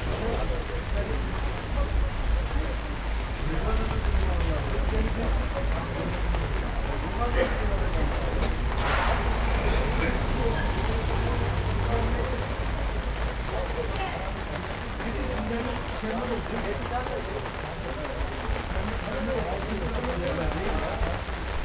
people talking in front of fried chicken takeaway, busy staff, it begins to rain
the city, the country & me: july 19, 2012
99 facets of rain
contribution for world listening day